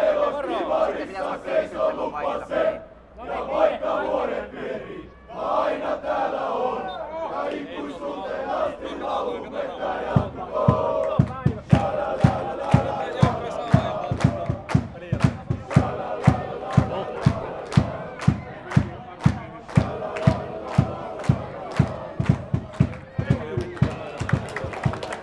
Raatin stadion, Oulu, Finland - AC Oulu supporters singing and chanting
Supporters of football team AC Oulu singing and chanting during the first division match between AC Oulu and Jaro. Zoom H5, default X/Y module.